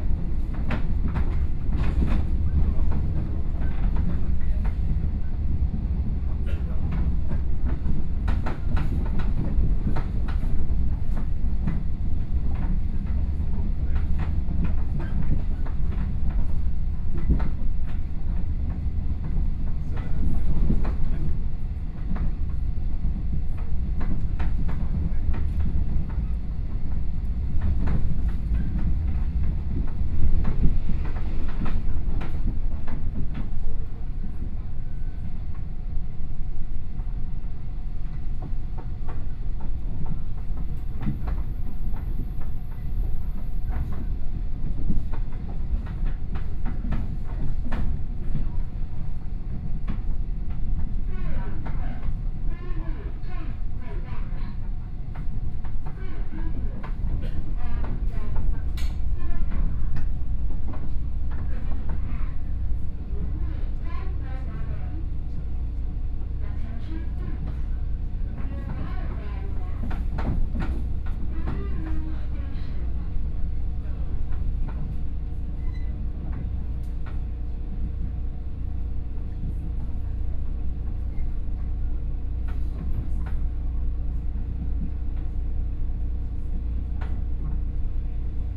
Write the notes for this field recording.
from Taichung Station to Wuri Station, Zoom H4n+ Soundman OKM II